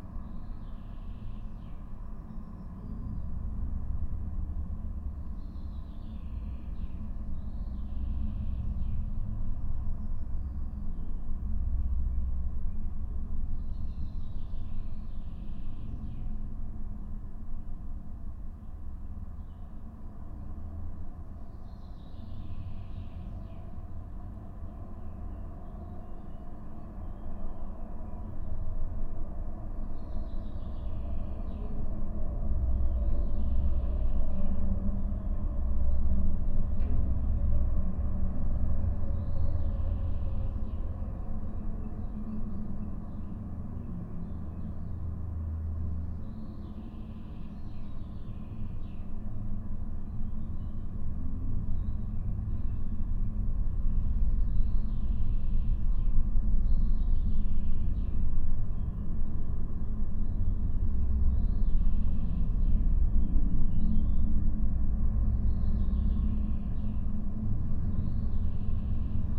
Birstonas observation tower - 50 meters high metallic building. Contact microphones recording.